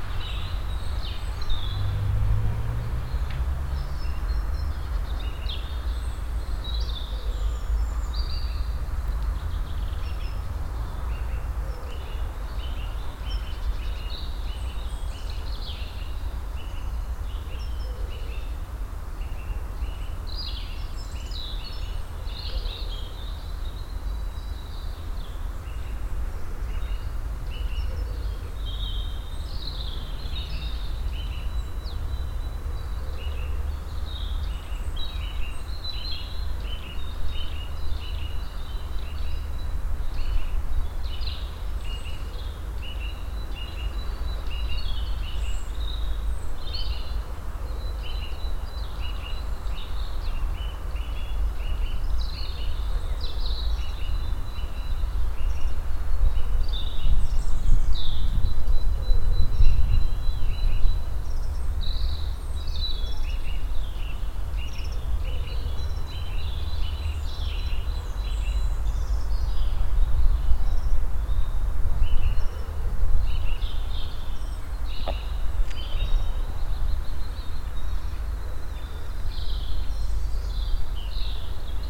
unna, breitenbach areal, spring morning
a warm spring morning - vivid birds in the trees and bushes of the small private gardens near the factory halls, steps on the stony passway
soundmap nrw - social ambiences and topographic field recordings